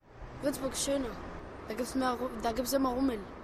a child explains that wuerzburg is more beautiful than wedding, berlin.
Berlin, Germany, April 2011